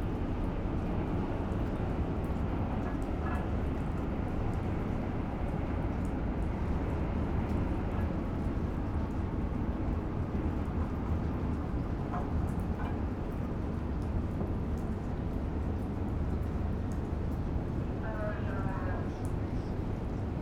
lipari harbour - ferry arrives
NAVE car ferry boat arrives in harbour of lipari
19 October 2009, 11:30